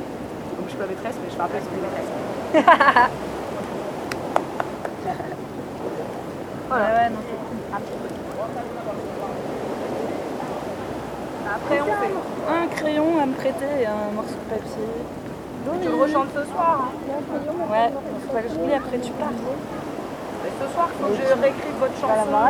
Brazil, Bahia, Ilha de Itaparica - Une brésilienne et cinq françaises sur l'île d'Itaparica.
Mercredi (Quarta-feira de cinzas), après-midi, bikini babes chant sur la plage.
- Loteamento Parque Ens do Sol, Bahia, Brazil